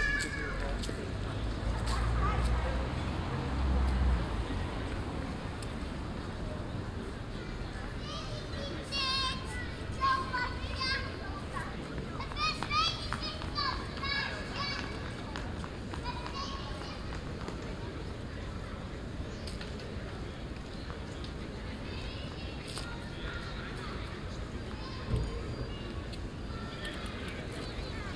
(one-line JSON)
{"title": "Voorhout, Den Haag, Nederland - Plein", "date": "2015-10-31 14:00:00", "description": "A sunny Saturday in October; People on café terraces, kids playing and one loud motorcycle.\nBinaural recording.", "latitude": "52.08", "longitude": "4.32", "altitude": "9", "timezone": "Europe/Amsterdam"}